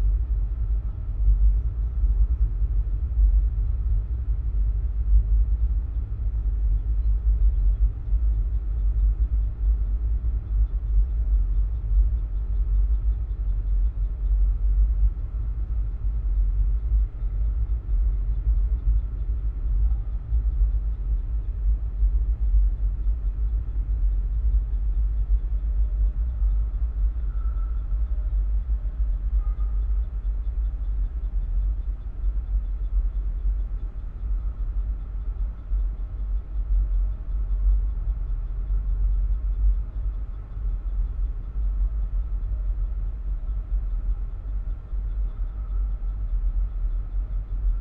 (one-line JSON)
{"title": "Lithuania, Kloviniai, cell tower's cable", "date": "2013-07-03 14:40:00", "description": "cell tower's support cable recorded with contact microphones", "latitude": "55.51", "longitude": "25.64", "altitude": "132", "timezone": "Europe/Vilnius"}